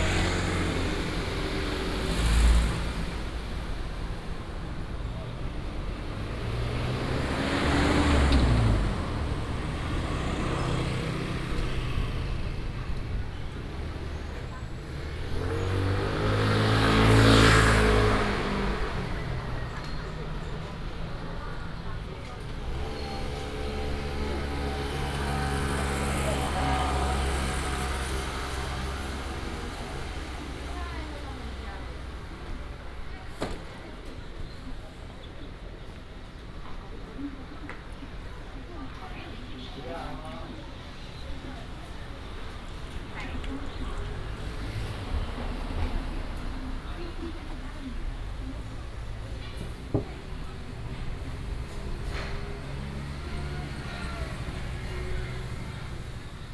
Walking in the market, Traffic sound, Garbage truck arrives.